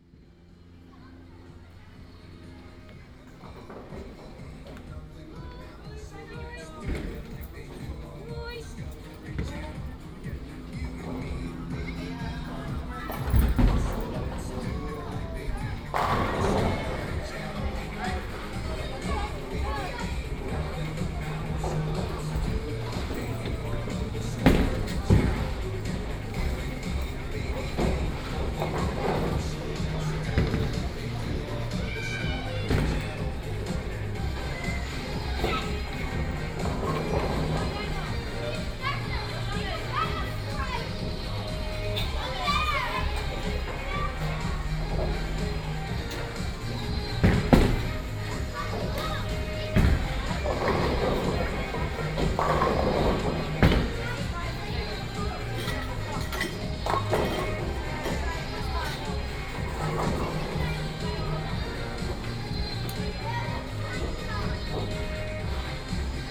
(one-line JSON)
{"date": "2011-09-10 16:38:00", "description": "entree bowlingcentrum\nentree bowling centre", "latitude": "52.16", "longitude": "4.45", "altitude": "1", "timezone": "Europe/Amsterdam"}